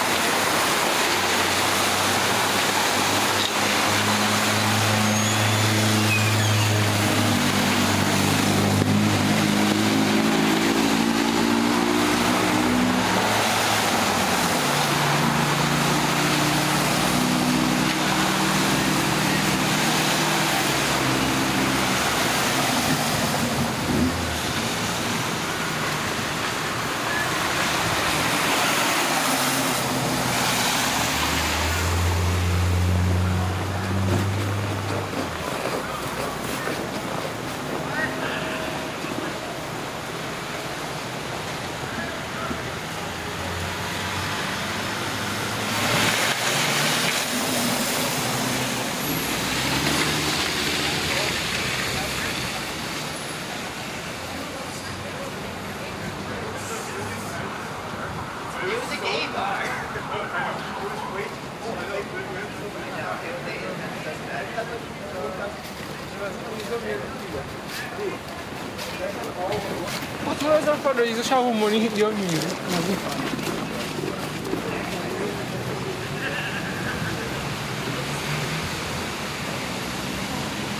Montreal: St-Laurent (1601 block) - St-Laurent (1601 block)
equipment used: Panasonic RR-US750
It's winter. The sun will set in about an hour or so.
Montreal, QC, Canada, 9 January 2009